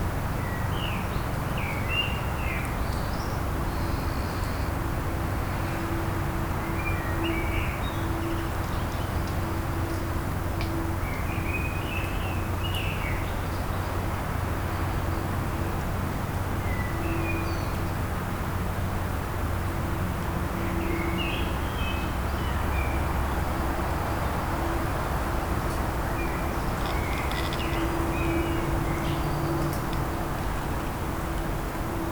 Wind sounds on pine trees canopy and trunk bending. Distant traffic and lawnmower.
Vent au sommet des pins et tronc se pliant. Bruit de trafic lointain et une tondeuse à gazon.
Rønne, Denmark, 12 May